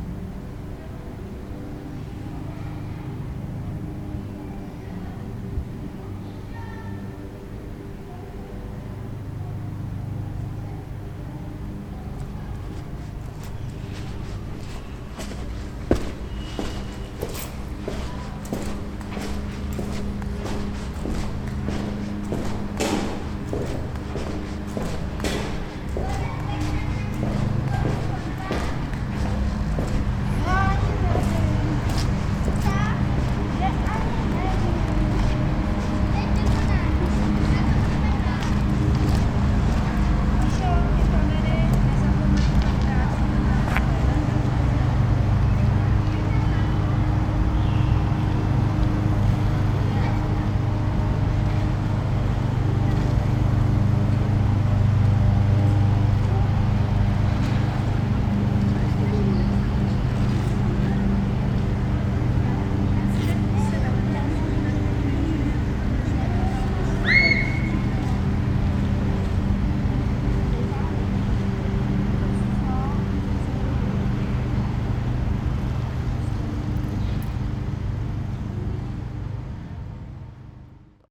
Walking inside the Invalidovna building and leaving to the park outside.
Prague, Czech Republic, 16 June 2011